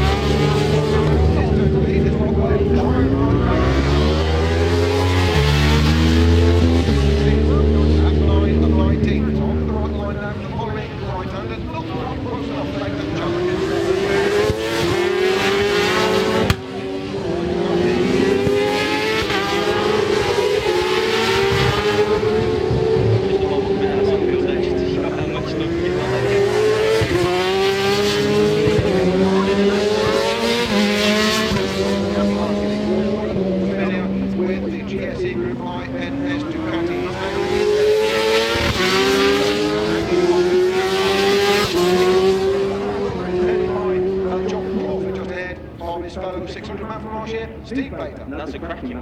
Silverstone Circuit, Towcester, United Kingdom - British Superbikes 2000 ... race two ...
British Superbikes 2000 ... race two ... one point stereo mic to minidisk ...